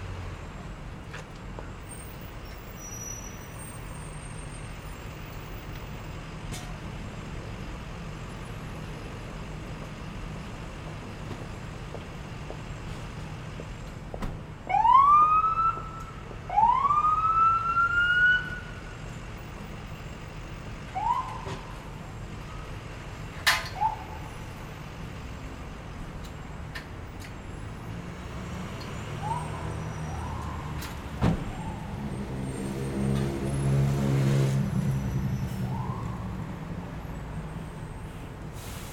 E 46th St, New York, NY, USA - Lex Ave Ambience, NYC
Lexington Ave Ambience.
Sounds of traffic, people walking, and workers unloading materials from a van.